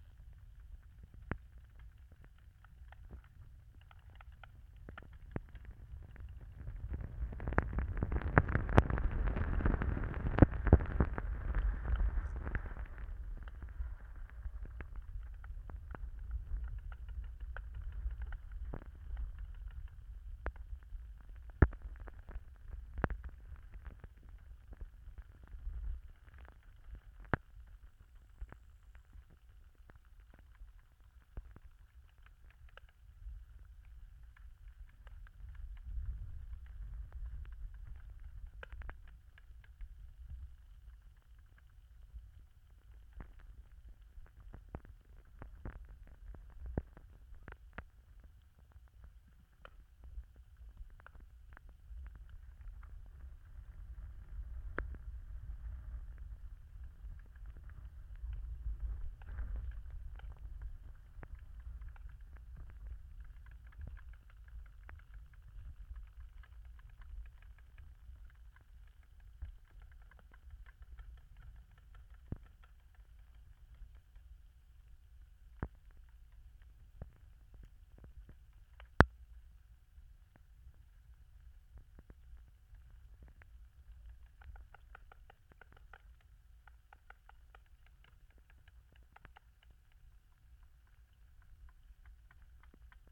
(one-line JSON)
{"title": "Merritt Trail, St. Catharines, ON, Canada - The Twelve | Abandoned GM Lands", "date": "2020-07-24 13:15:00", "description": "The lower Twelve Mile Creek in the City of St. Catharines ON has been entirely reconstructed for industrial use since the mid nineteenth century, first for the Welland Canal then for DeCew Generating Station. An abandoned General Motors plant sits on the east side of the lower Twelve. I set my H2n recorder opposite that site on the Merritt Trail on the west side of the creek then threw a hydrophone some meters out into the water. Above water, we hear many birds, Canada Geese honking and shaking, my dog panting and city traffic. Below water, the sounds are a mystery as there is no way to see the life that carries on in this murky water. One week before this recording, an environmental report was submitted to the City regarding storm sewer outfalls from the abandoned plant, including that the former GM sewer and municipal sewer outfalls exceed the PCB threshold. The Twelve empties into Lake Ontario, one of the Great Lakes which hold 23% of the world's surface fresh water.", "latitude": "43.17", "longitude": "-79.27", "altitude": "88", "timezone": "America/Toronto"}